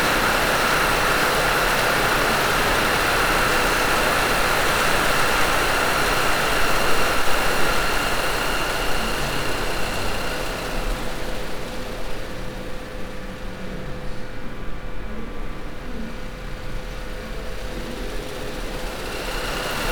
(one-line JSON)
{"title": "Umeå, Verkligheten, sound installation", "date": "2011-05-26 13:57:00", "description": "Sound installation at Ljud 11-Klang Elf-Sound Eleven - Verkligheten gallery exhibition 13.05-27.05.2011", "latitude": "63.82", "longitude": "20.28", "altitude": "23", "timezone": "Europe/Stockholm"}